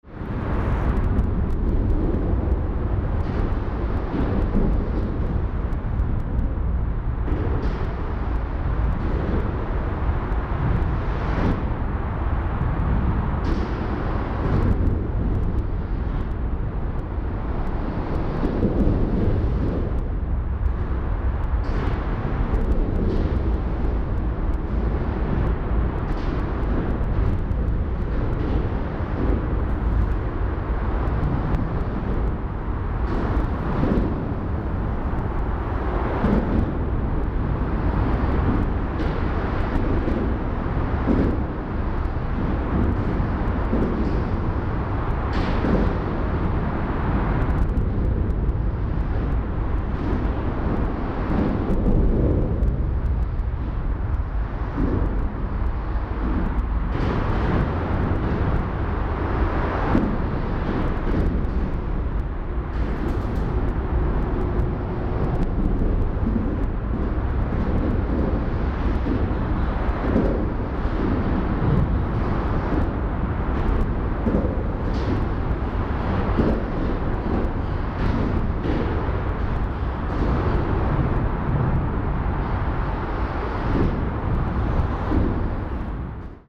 nr Bray. Under M4 motorway bridge
Cars on the M4 motorway passing over the River Thames bridge. (Slight clipping occurs)